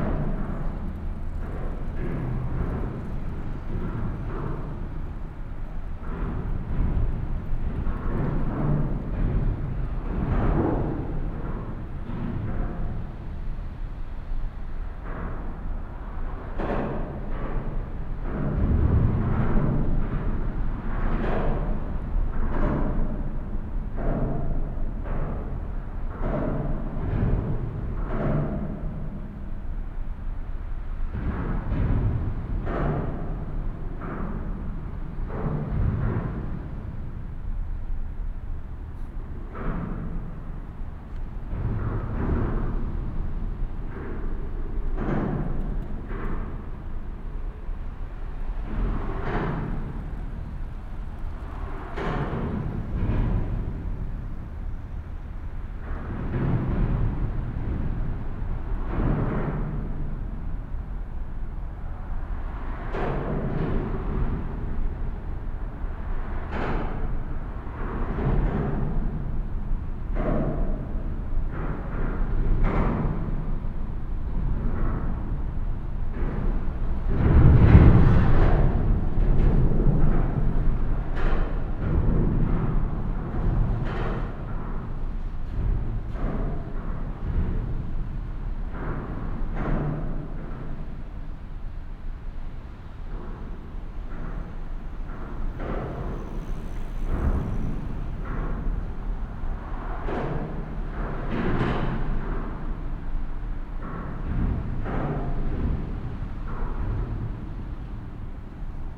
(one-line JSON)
{"title": "Bonn, Deutschland - urban drum-machine bonn", "date": "2010-07-23 14:10:00", "description": "A bicycle and walking path is leading under the Adenauer bridge directly along the rhine. And as is often the case in such architectural situations, these places become walkable drum-machines due to their traffic.", "latitude": "50.72", "longitude": "7.14", "altitude": "50", "timezone": "Europe/Berlin"}